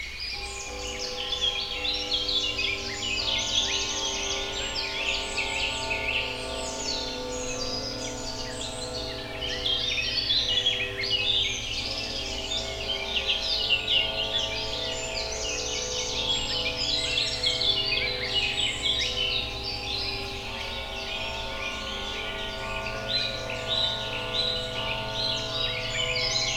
Grgar, Grgar, Slovenia - Grgar Slatna
Birds in forest. Recorded with Sounddevices MixPre3 II and LOM Uši Pro.